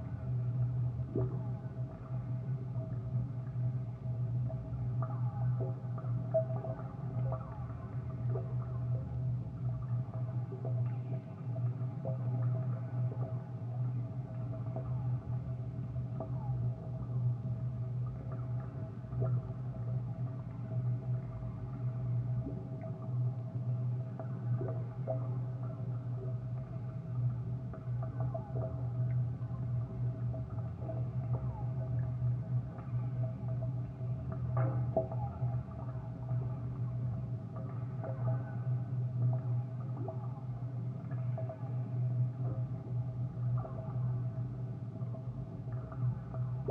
Gabaldón, Cuenca, España - Abandoned oil borehole
Two contact microphones placed on an oil borehole.
4 January 2004, ~16:00